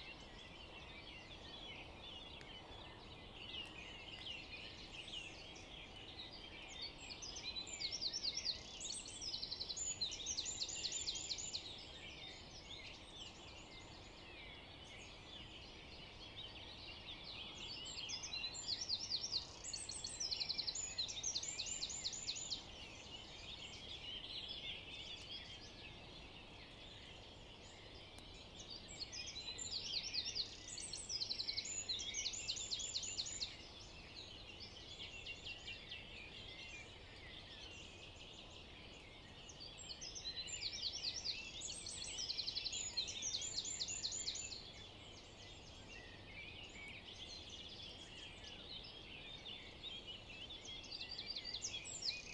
Unnamed Road, Neuenburg am Rhein, Deutschland - Vogelkonzert
Morgendliches Vogelstimmenkonzert am Rhein
Neuenburg am Rhein, Germany, 7 May 2019